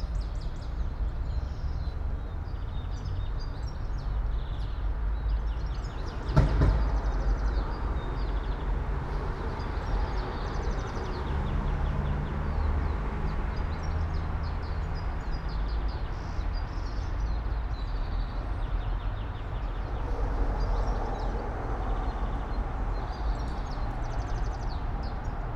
all the mornings of the ... - apr 9 2013 tue